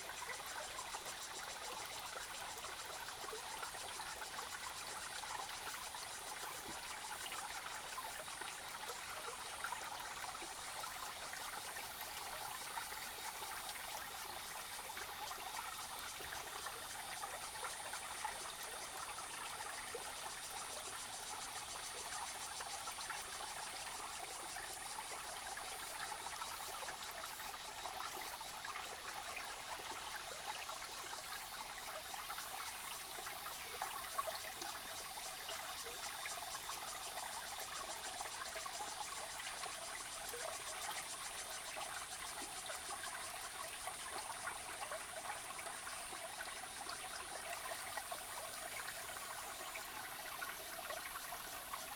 Zhonggua River, 成功里 Puli Township - Sound of water
Brook, small stream, Sound of water
Zoom H2n MS+XY+Spatial audio
Nantou County, Taiwan